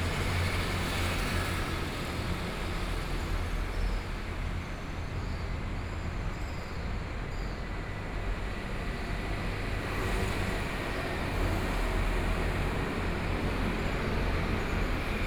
{"title": "Zhongzheng E. Rd., Zhubei - Train traveling through", "date": "2013-09-24 19:17:00", "description": "In the elevated roadway beneath, Train traveling through, Traffic Noise, Zoom H4n+ Soundman OKM II", "latitude": "24.84", "longitude": "121.01", "altitude": "33", "timezone": "Asia/Taipei"}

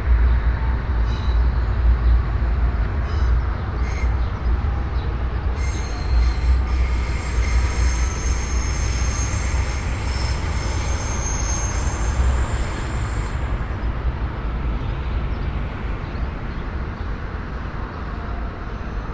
{"title": "dawn window, Karl Liebknecht Straße, Berlin, Germany - sunrise at 05:09", "date": "2013-05-16 05:08:00", "description": "sunrise sonicscape from open window at second floor ... for all the morning angels around at the time\nstudy of reversing time through space on the occasion of repeatable events of the alexandreplatz ambiance", "latitude": "52.52", "longitude": "13.41", "altitude": "47", "timezone": "Europe/Berlin"}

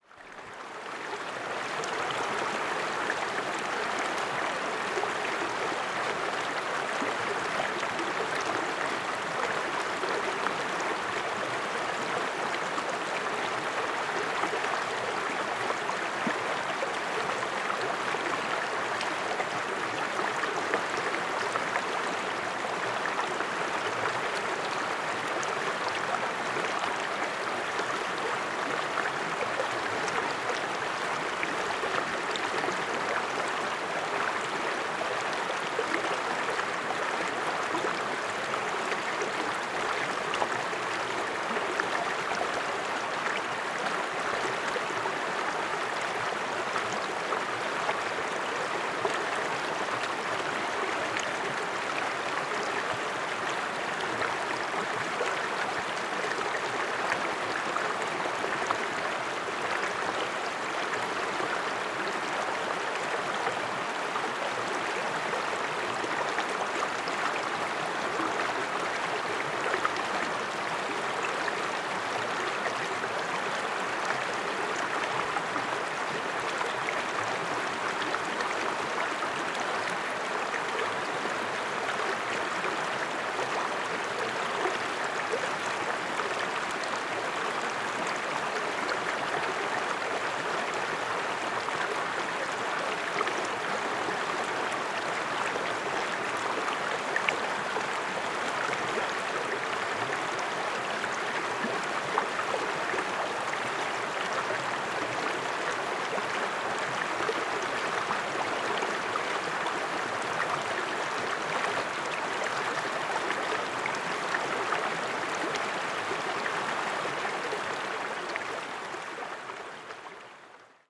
{"title": "Seno Almirantazgo, Magallanes y la Antártica Chilena, Chile - storm log - rio fontaine", "date": "2021-02-21 16:15:00", "description": "Rio Fontaine, no wind, ZOOM F1, XYH-6 cap\nOn the south shore of the Almirantazgo a small river - the Rio Fontain - is ending in to the fjord.", "latitude": "-54.47", "longitude": "-69.05", "altitude": "10", "timezone": "America/Punta_Arenas"}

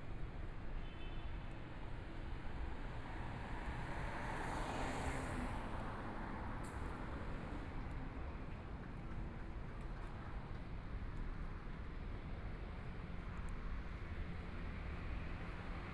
{"title": "Jinzhou St., Zhongshan Dist. - Walking through the Stree", "date": "2014-02-15 18:25:00", "description": "Walking through the Street, Sound a variety of shops and restaurants, Traffic Sound, Walking towards the west direction\nPlease turn up the volume a little.\nBinaural recordings, Zoom 4n+ Soundman OKM II", "latitude": "25.06", "longitude": "121.53", "timezone": "Asia/Taipei"}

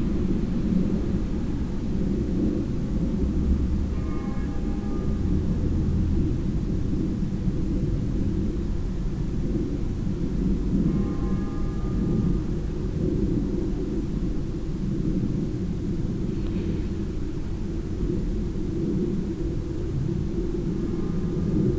{"title": "nettersheim, kirchglocken, bahn- und flugverkehr", "description": "nettersheim am abend, kirchglocken, schritte im verharschten schne, vorbeifahrt der bahn, flugverkehr\nsoundmap nrw\nsocial ambiences/ listen to the people - in & outdoor nearfield recordings", "latitude": "50.49", "longitude": "6.63", "altitude": "470", "timezone": "GMT+1"}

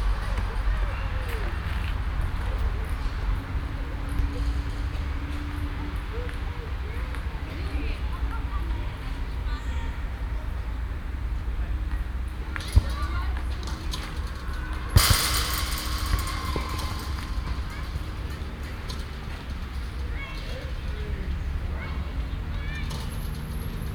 {"title": "Schivelbeiner Str., Berlin, Deutschland - Arnimplatz Playground", "date": "2004-05-08 17:00:00", "description": "a reminder of the vibrant city", "latitude": "52.55", "longitude": "13.41", "altitude": "50", "timezone": "Europe/Berlin"}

